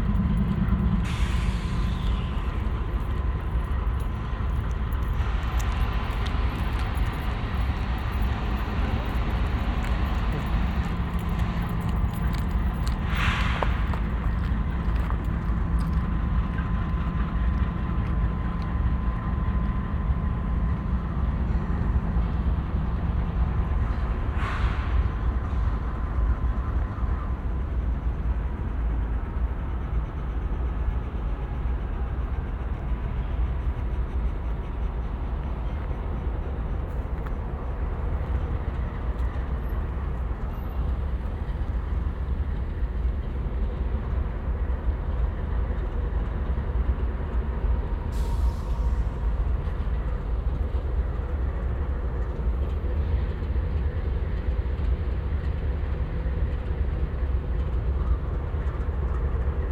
Alyth - Bonnybrook - Manchester, Calgary, AB, Canada - Train Tracks